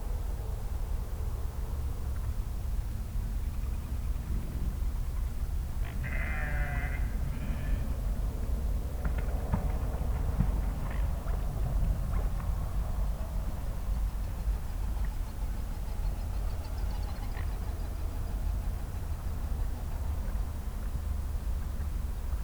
sheep, wild geese, ducks, barking dogs and other busy animals
the city, the country & me: march 5, 2013
5 March, 19:14